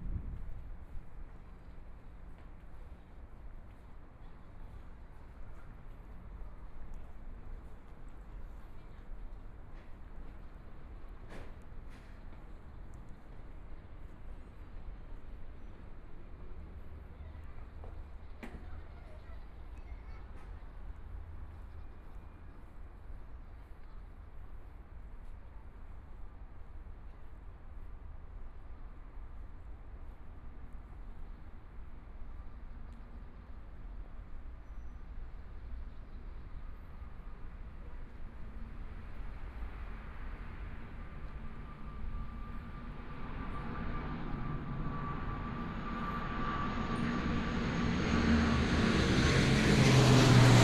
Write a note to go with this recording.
Walking through the park, Environmental sounds, Traffic Sound, Tourist, Clammy cloudy, Binaural recordings, Zoom H4n+ Soundman OKM II